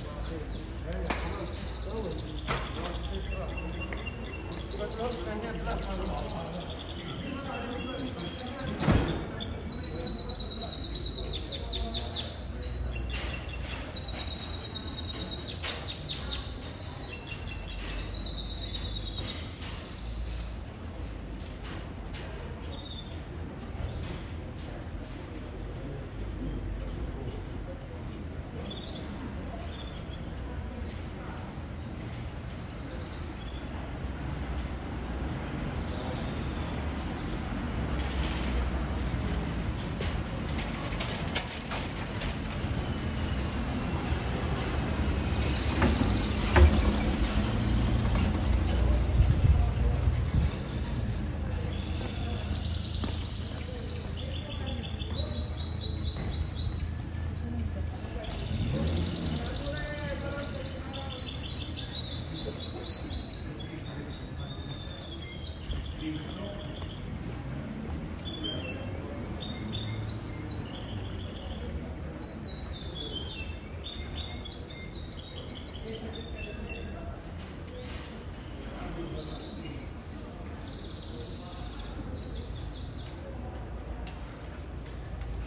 Via delle Belle Arti, Bologna BO, Italia - uccellini in gabbia allangolo con lufficio postale
Due piccole gabbie di uccellini vengono appese ogni mattina fuori dalle finestre sopra l'ufficio postale di via Belle Arti, all'incrocio con via de' Castagnoli.
Gli uccellini sono presenti in questo angolo di strada da almeno 31 anni, forse in numero minore che una decina d'anni. Il cinguettio degli uccelli in gabbia può essere considerato un landmark sonoro per le ore diurne (in assenza di pioggia o neve).
La registrazione è stata fatta alle ore 10.30 di mattina, con Bologna da pochi giorni in "zona gialla" per il contenimento del contagio da Coronavirus.
C'è meno passaggio di persone del solito e meno traffico poiché l'università non ha riattivato pienamente i corsi.